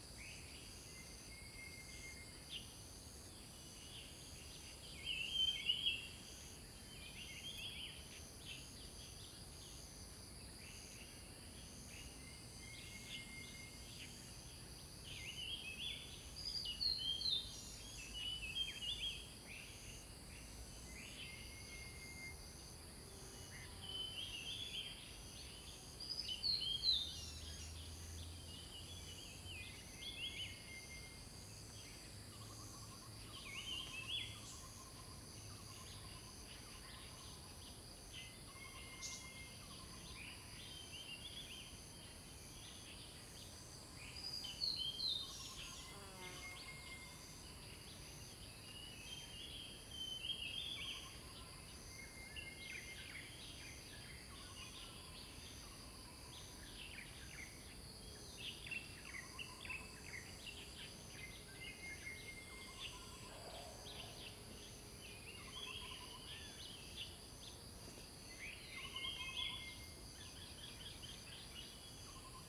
種瓜路, 桃米里, Puli Township - Birds sound
Birds called, Birds singing
Zoom H2n MS+XY
6 May 2016, 06:55